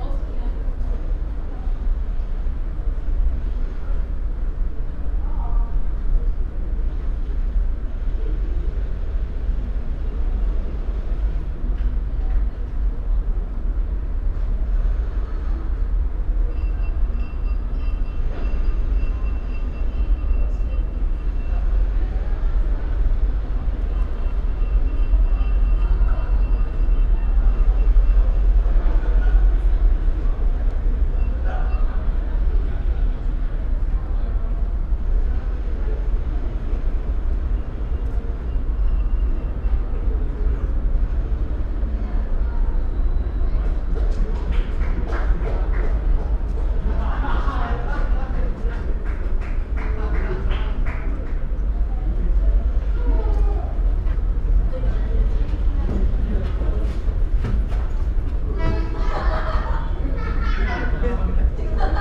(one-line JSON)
{"title": "berlin, holocaust monument - unusual acoustics", "date": "2022-06-14 16:14:00", "description": "The Memorial to the Murdered Jews of Europe in Berlin is in many ways an interesting site, with an unusual acoustics when you go inside.", "latitude": "52.51", "longitude": "13.38", "altitude": "31", "timezone": "Europe/Berlin"}